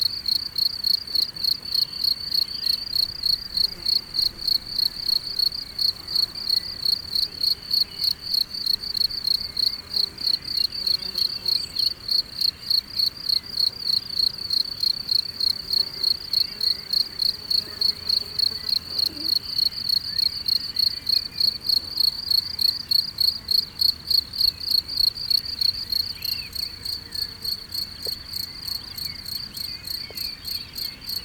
Corbonod, France - Locusts
Locusts are singing in the grass during a hot summer evening in Corbonod, a small village where grape vine are everywhere and beautiful.
June 2017